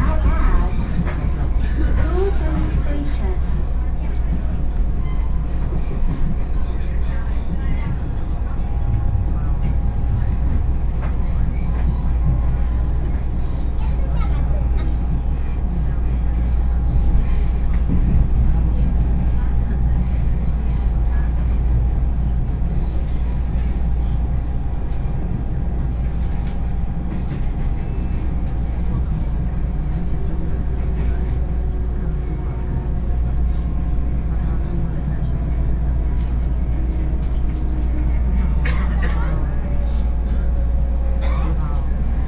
Xizhi District, New Taipei City - On the train